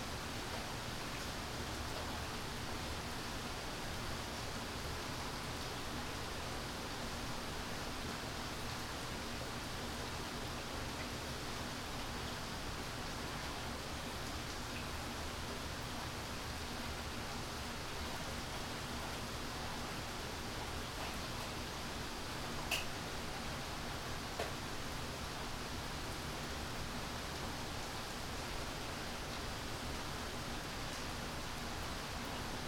Warwick Blvd, Kansas City, MO - October 06 2018 thunderstorms binaural mic
binaural mic this time